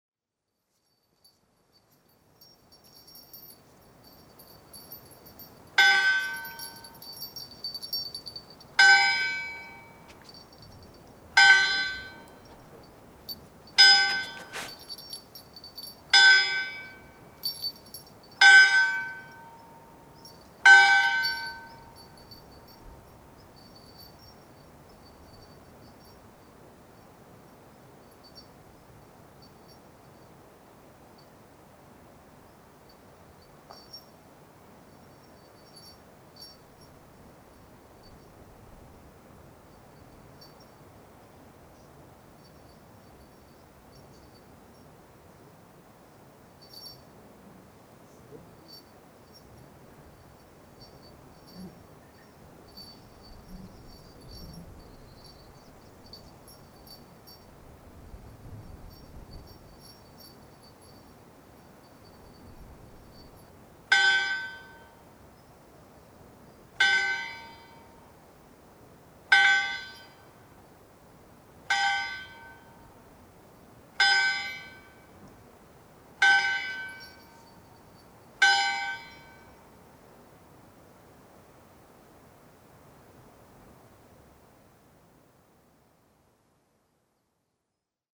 Trèves, France - The bell and Lola
Early on the morning, I recorded the bell. It was a so bad bad bell that I called it the cauldron ! Lola, a small dog, came to see the recorder and after came to see my breakfast (if it was good ?). The Trevezel, the river very near, makes noise, but I really wanted to record the bell that ringed all night as I was trying to sleep, and two times every hour please !
(And Lola had brakfast ;-)